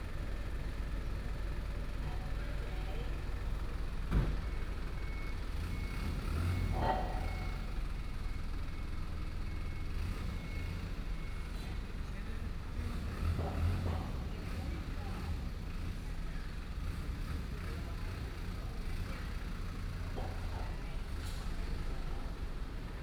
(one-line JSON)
{"title": "龍陣一號公園, Da’an Dist., Taipei City - in the Park", "date": "2015-07-20 07:42:00", "description": "Morning in the park, Construction Sound", "latitude": "25.03", "longitude": "121.54", "altitude": "21", "timezone": "Asia/Taipei"}